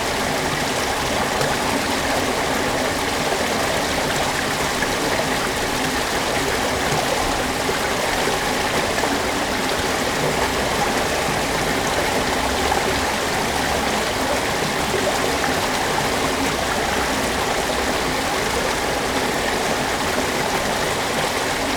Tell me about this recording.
Mill race ... Caudwell Mill ... Rowsley ... lavalier mics clipped to clothes pegs ... fastened to sandwich box ...